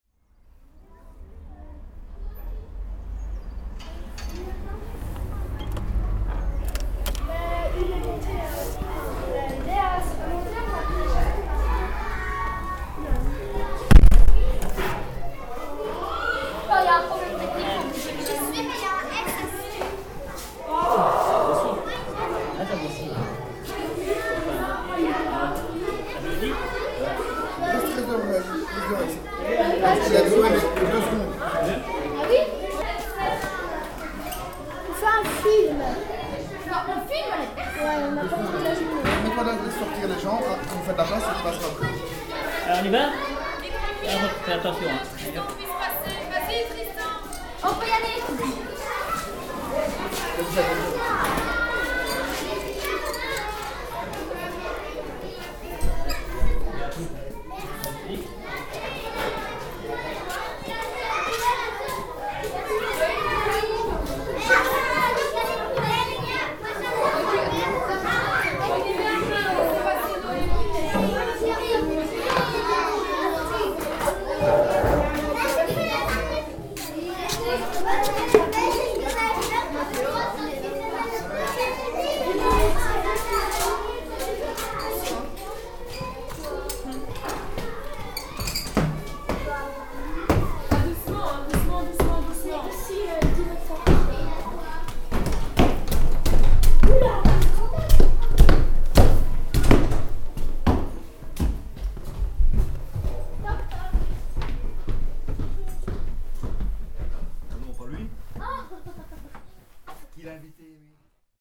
Court-St.-Étienne, Belgique - St-Etienne school
Climbing the stairs into the St-Etienne school.